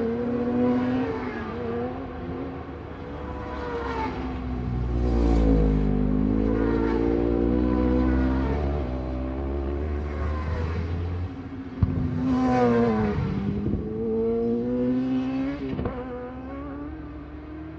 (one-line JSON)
{"title": "Unnamed Road, Louth, UK - British Superbikes 2005 ... Superbikes(contd)", "date": "2005-08-27 15:00:00", "description": "British Superbikes 2005 ... Superbikes(contd) ... Cadwell Park ... one point stereo mic to minidisk ...", "latitude": "53.31", "longitude": "-0.06", "altitude": "116", "timezone": "Europe/London"}